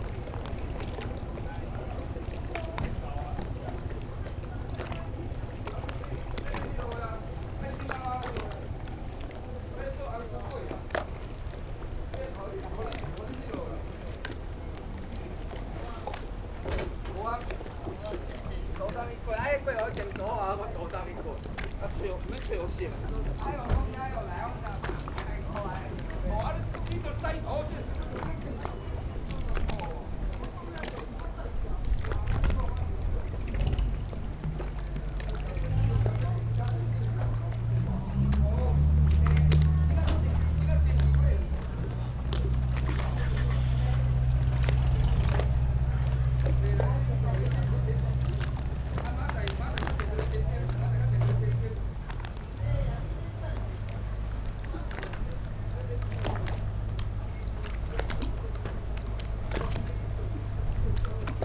北寮村, Huxi Township - Waves and Tide
At the beach, sound of the Waves
Zoom H2n MS+XY
Penghu County, Huxi Township, 21 October 2014, ~15:00